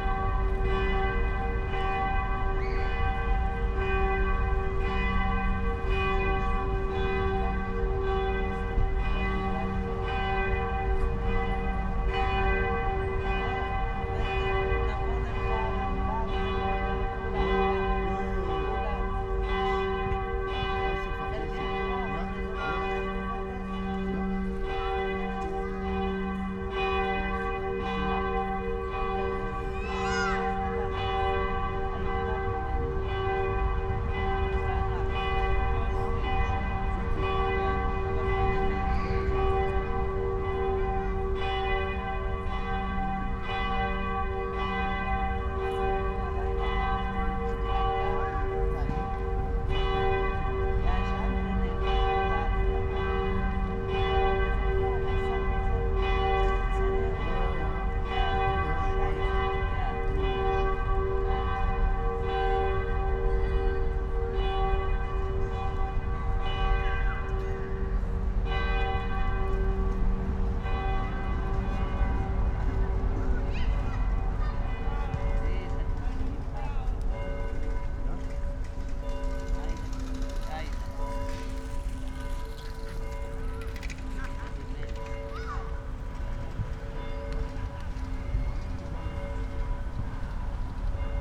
Reuterplatz, Berlin, Deutschland - 6pm churchbells
two churches at Reuterplatz ringing their bells at 6pm. Many churches were invited to ring their bells this day, for climate change to happen.
(Sony PCM D50, Primo EM172)